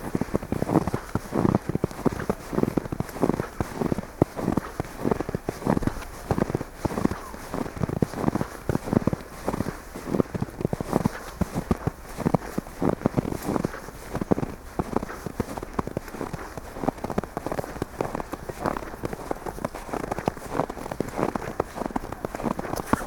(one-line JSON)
{"title": "Isabellaland, Den Haag, Nederland - snowwalk The Hague", "date": "2010-12-17 14:38:00", "latitude": "52.10", "longitude": "4.37", "altitude": "1", "timezone": "Europe/Amsterdam"}